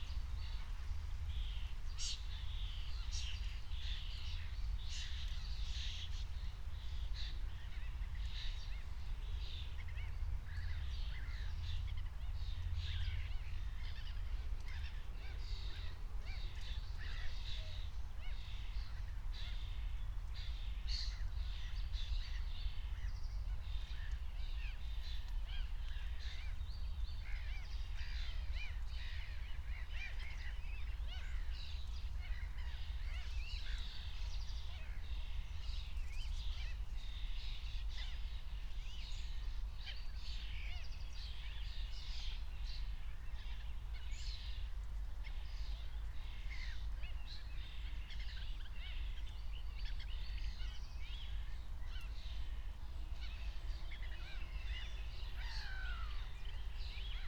10:17 Berlin, Buch, Moorlinse - pond, wetland ambience